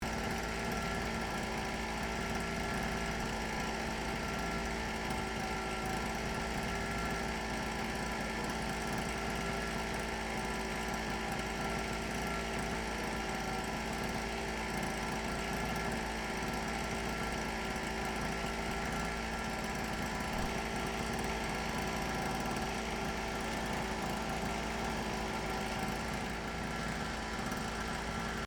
corner nepszinhas
stand of a roma street seller